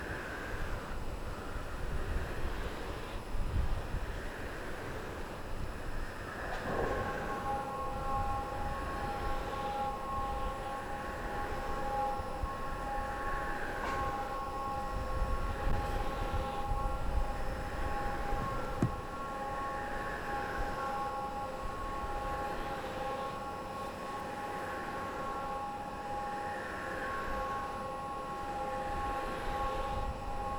{"title": "Granvilliers, France - Eoliennes", "date": "2015-08-20 15:38:00", "description": "Wind Machine in the Fields\nBinaural recording with Zoom H6", "latitude": "49.67", "longitude": "1.96", "altitude": "185", "timezone": "Europe/Paris"}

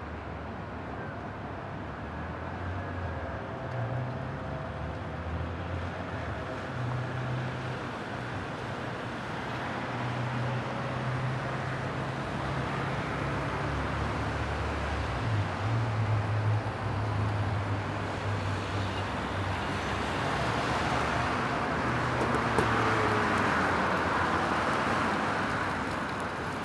{"title": "Kop van Zuid, Rotterdam, The Netherlands - Wilhelminaplein, Rotterdam", "date": "2012-04-22 15:56:00", "latitude": "51.91", "longitude": "4.49", "altitude": "3", "timezone": "Europe/Amsterdam"}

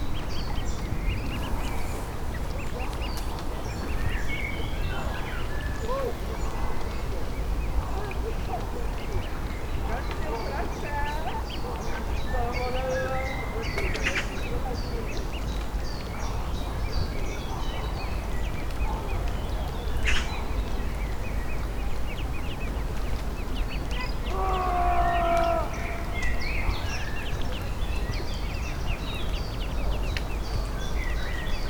{"title": "Poznan, Rusalka lake - ducklings", "date": "2015-06-27 14:29:00", "description": "a flock of ducklings orbiting their mother. their squawking is not so obvious among rich sounds of nature around the lake and noise of the surrounding city.", "latitude": "52.43", "longitude": "16.88", "altitude": "70", "timezone": "Europe/Warsaw"}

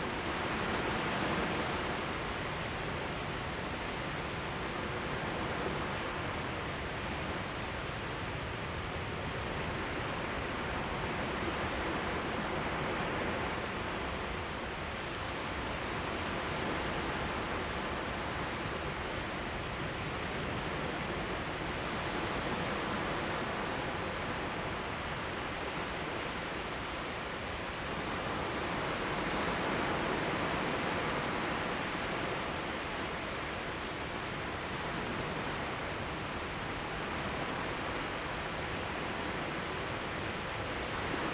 {"title": "新月沙灣, 新竹縣竹北市 - At the beach", "date": "2017-09-21 09:53:00", "description": "At the beach, Sound of the waves, Zoom H2n MS+XY", "latitude": "24.87", "longitude": "120.94", "altitude": "8", "timezone": "Asia/Taipei"}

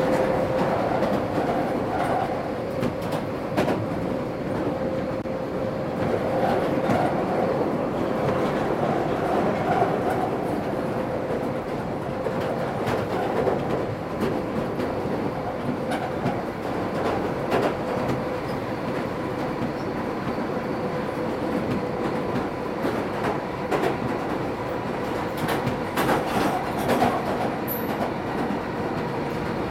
{"title": "london, tube to victoria station", "description": "recorded july 18, 2008.", "latitude": "51.58", "longitude": "-0.06", "altitude": "10", "timezone": "GMT+1"}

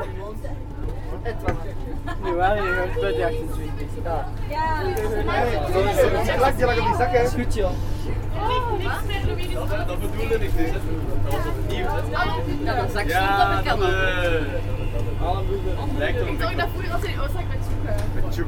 {"title": "Hoeilaart, Belgique - Crowded train", "date": "2015-01-10 10:30:00", "description": "Is this a livestock trailer ? No no no ! This is a normal train on saturday, where scouts are playing loudly !", "latitude": "50.76", "longitude": "4.45", "altitude": "104", "timezone": "Europe/Brussels"}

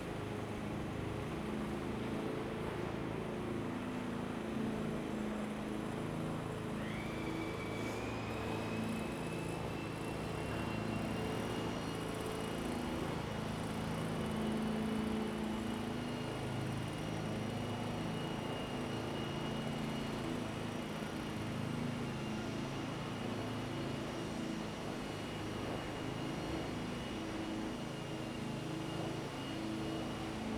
{"title": "Ascolto il tuo cuore, città. I listen to your heart, city. Several chapters **SCROLL DOWN FOR ALL RECORDINGS** - Tuesday noisy Tuesday in the time of COVID19 Soundscape", "date": "2020-06-23 10:18:00", "description": "\"Tuesday noisy Tuesday in the time of COVID19\" Soundscape\nChapter CXI of Ascolto il tuo cuore, città, I listen to your heart, city.\nTuesday, June 23th 2020. Fixed position on an internal terrace at San Salvario district Turin, one hundred-five days after (but day fifty-one of Phase II and day thirty-eight of Phase IIB and day thirty-two of Phase IIC and day 9th of Phase III) of emergency disposition due to the epidemic of COVID19.\nStart at 10:18 a.m. end at 11:02 p.m. duration of recording 44’:14”", "latitude": "45.06", "longitude": "7.69", "altitude": "245", "timezone": "Europe/Rome"}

